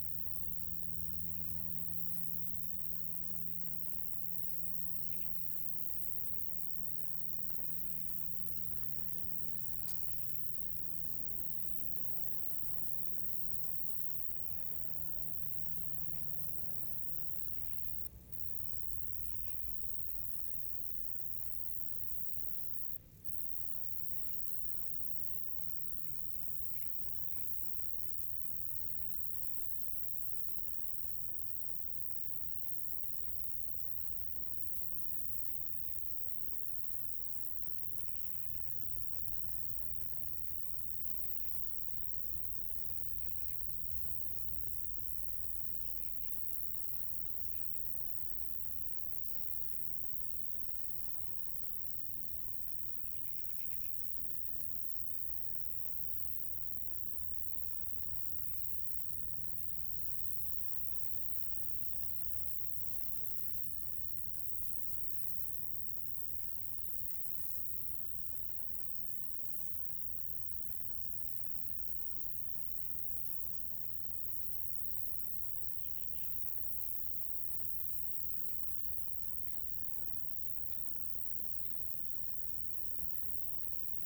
On a very hot summer day, crickets in the grass and small wind in the blades of grass.
Saint-Martin-de-Nigelles, France